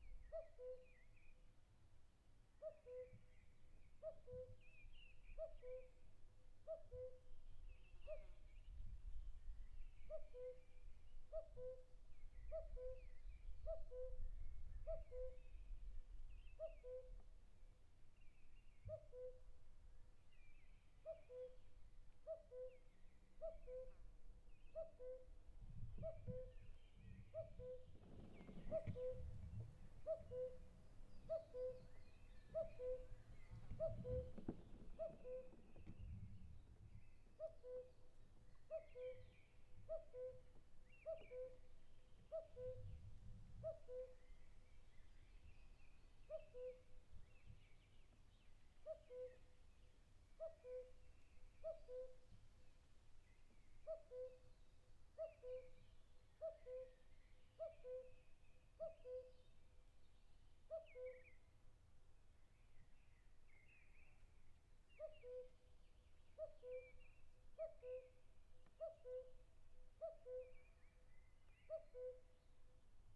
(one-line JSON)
{"title": "Teteven, Bulgaria - Cuckoo bird singing", "date": "2021-05-23 12:16:00", "description": "A cuckoo bird cuckooing in the Balkan mountain. Recorded with a Zoom H6 with the X/Z microphone.", "latitude": "42.94", "longitude": "24.27", "altitude": "844", "timezone": "Europe/Sofia"}